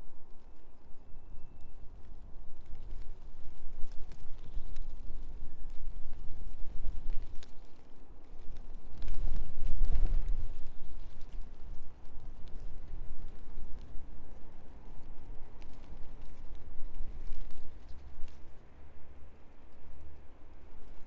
This is one of my first experiments recording with a Sound Devices; the set-up is Sound Devices 702 plus Audio Technica BP4029 stereo microphone. I am not sure if I had the channels linked correctly, as I had to boost the left a little in post-production to balance left and right channels. Haren is a very quiet district on the outskirts of Brussels, and the day we visited was very windy. There are many trains passing through, and lots of quiet green pockets of land. I was standing in a sheltered spot beside the wall that surrounds St Catherine's Church. I could hear very close by, the sounds of birds and the wind moving through the ivy; and more distantly, the trains passing and traffic moving gently in the nearby square. It is not my favourite recording ever; it's a little windy in places. However it does document a careful listening experience in what was to me a completely foreign land and sound scape.
Vlaams-Brabant, Vlaams Gewest, België - Belgique - Belgien, 26 March 2013, 15:57